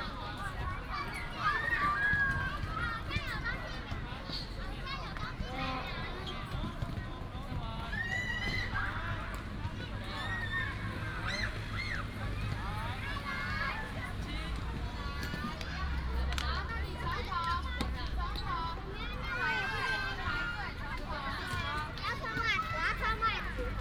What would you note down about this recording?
In the park's kids game area, Traffic sound, The park gathers a lot of children every night